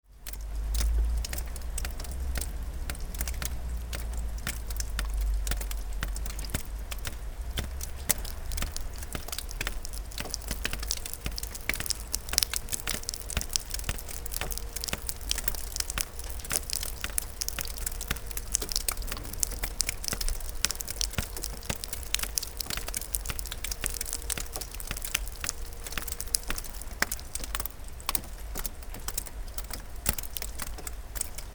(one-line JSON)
{"title": "Cour du Bas - ça goutte", "date": "2013-02-17 11:48:00", "description": "Au matin sous le soleil, la neige fond et libère ses gouttes.", "latitude": "47.96", "longitude": "6.83", "altitude": "498", "timezone": "Europe/Paris"}